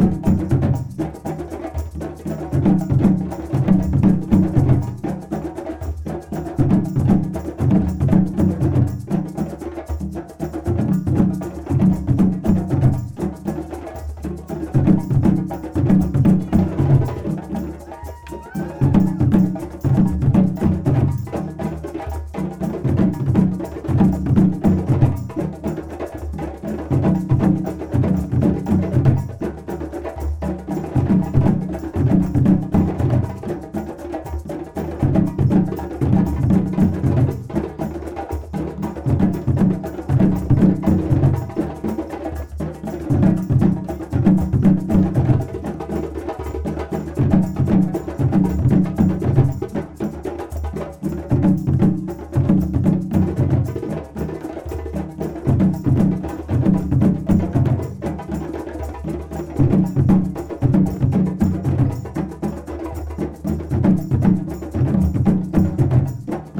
{"title": "Court-St.-Étienne, Belgique - Mandingue", "date": "2016-06-11 14:40:00", "description": "During the annual feast of Court-St-Etienne called the braderie, some people were freely gathered in this blind alley and played djembe. This is mandingue music, coming from west cost Africa (Mali, Guinea). They play loudly and lot of people stop their walk in the flea market to listen to them. The troop is called 'Culture mandingue'.", "latitude": "50.65", "longitude": "4.57", "altitude": "61", "timezone": "Europe/Brussels"}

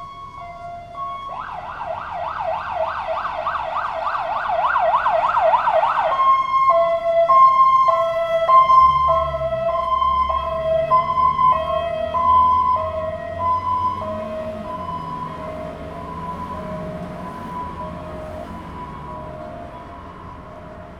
{"title": "新壽豐門市, Shoufeng Township - Small towns", "date": "2014-08-28 12:18:00", "description": "In front of the convenience store, Traffic Sound, Very hot weather\nZoom H2n MS+XY", "latitude": "23.87", "longitude": "121.51", "altitude": "41", "timezone": "Asia/Taipei"}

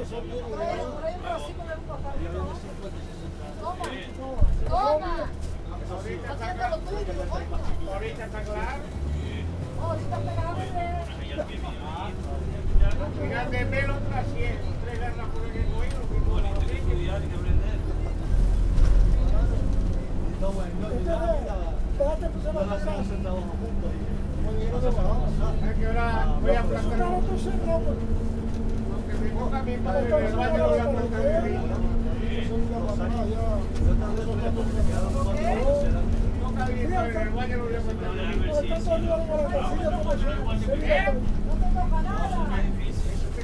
{"title": "Santa Cruz de Tenerife, Anaga mountains - bus into Anaga Mountains", "date": "2016-09-07 14:52:00", "description": "on a bus traveling into the Anaga Mountains. The passengers and the driver got into a very intense conversation, as every day I assume. (sony d50)", "latitude": "28.53", "longitude": "-16.28", "timezone": "GMT+1"}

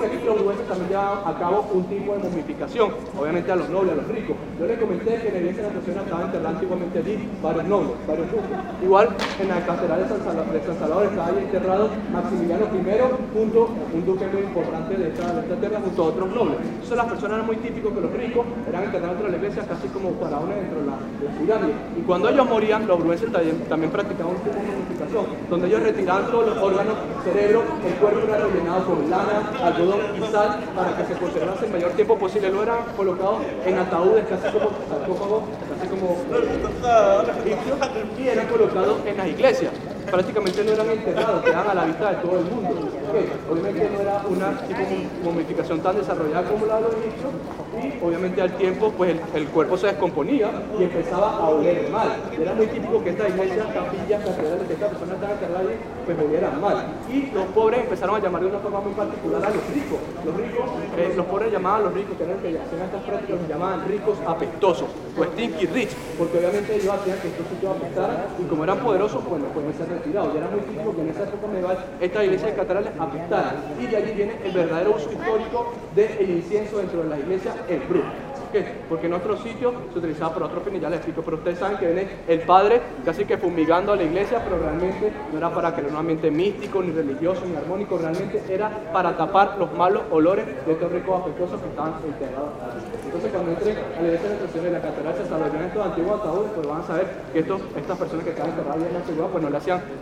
{"title": "Brugge, België - Tourist guidance", "date": "2019-02-16 13:30:00", "description": "Arentshuis. Tourist guidance in Spanish for many tourists, automatic speech repeated a thousand times. The guide voice reverberates on the brick facades of this small rectangular square.", "latitude": "51.20", "longitude": "3.22", "altitude": "5", "timezone": "GMT+1"}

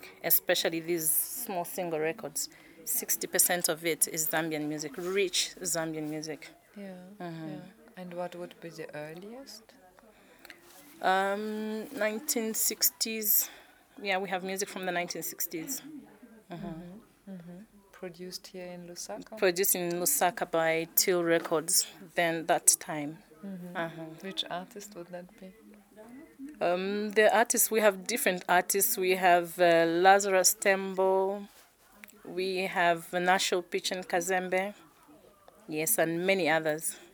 {"title": "Mass Media Centre, ZNBC, Lusaka, Zambia - Rich cultural information...", "date": "2012-07-19 16:00:00", "description": "… continuing our archive conversations…. Mrs. Martha Chitalunyama, senior information resource officer, adds details about the content, transcription and publication practices of the ZNBC archives. For example, the video publications of ceremonies can often be accompanied by audio CDs of early recordings with Zambian artists from the archive’s vinyl collection. Broadcast technology was digitalized about 10 years ago leaving much of the archive’s cultural heritage currently unaired. Transcription services are slow with only one record player, which is in the dubbing studio, and thus, public access to the rich history of Zambian music and recordings remains a trickle. A large archive of spoken word recordings including traditional storytelling remains entirely untouched by transcriptions. There is as yet no online reference nor catalogue about these rich cultural resources.\nThe entire playlist of recordings from ZNBC audio archives can be found at:", "latitude": "-15.41", "longitude": "28.32", "altitude": "1265", "timezone": "Africa/Lusaka"}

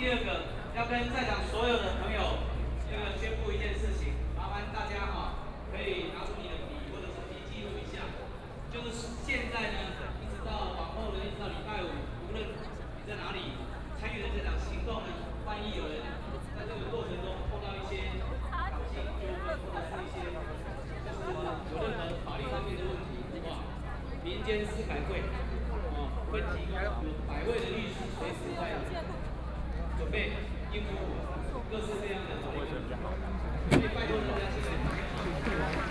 {"title": "Qingdao E. Rd., Zhongzheng Dist. - Walking through the site in protest", "date": "2014-03-19 21:47:00", "description": "Walking through the site in protest, Traffic Sound, People and students occupied the Legislature\nBinaural recordings", "latitude": "25.04", "longitude": "121.52", "altitude": "15", "timezone": "Asia/Taipei"}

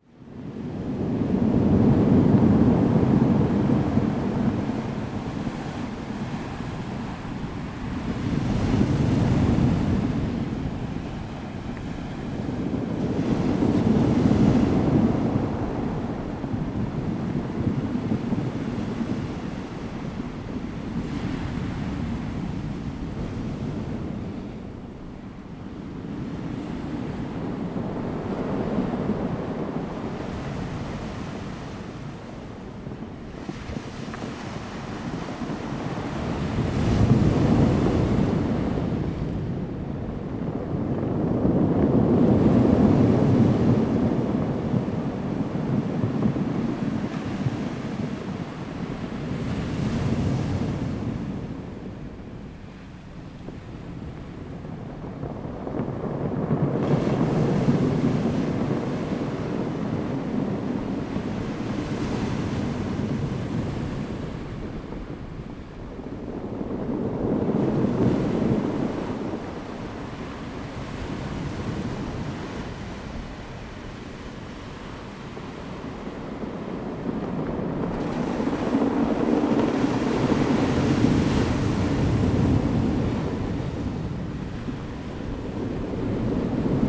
{"title": "達仁溪橋, Nantian, Daren Township - Rolling stones", "date": "2018-03-23 11:14:00", "description": "At the beach, Sound of the waves, Near the waves\nZoom H2n MS+XY", "latitude": "22.26", "longitude": "120.89", "altitude": "5", "timezone": "Asia/Taipei"}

